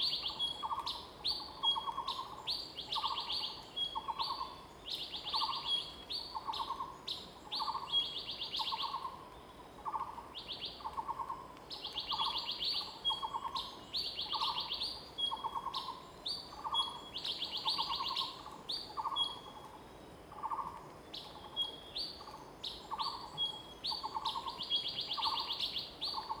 水上, TaoMi Li, Puli Township - Birds singing
Birds singing, face the woods
Zoom H2n MS+ XY